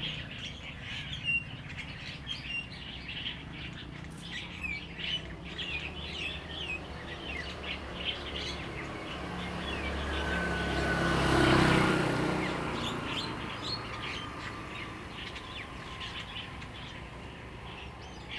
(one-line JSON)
{"title": "本福村, Liuqiu Township - Birds singing", "date": "2014-11-01 09:19:00", "description": "Birds singing, Traffic Sound\nZoom H2n MS +XY", "latitude": "22.35", "longitude": "120.38", "altitude": "2", "timezone": "Asia/Taipei"}